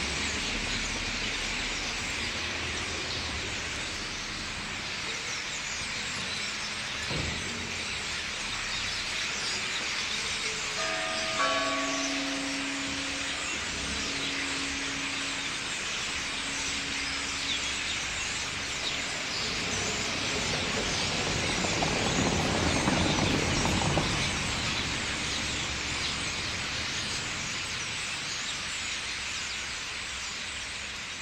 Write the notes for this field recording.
Thousands of starlings gathering in the trees at night. Un bon millier d'étourneaux se rassemblent dans les arbres. Tech Note : Sony PCM-M10 internal microphones.